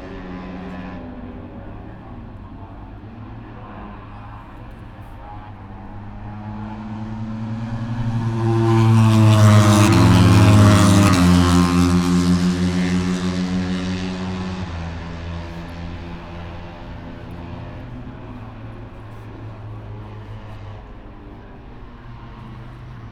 british motorcycle grand prix 2022 ... moto three free practice one ... dpa 4060s on t bar on tripod to zoom f6 ...
5 August 2022, ~09:00